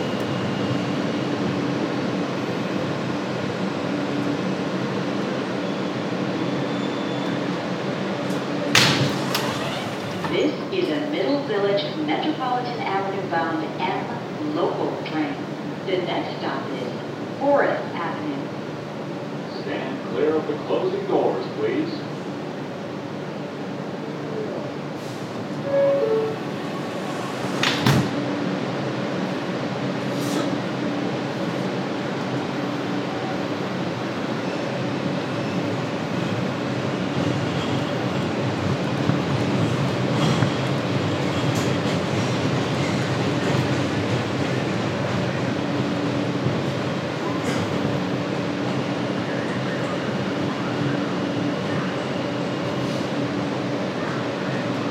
Sounds of wind inside the M train. Train announcements.
Leaving the train at Forest ave.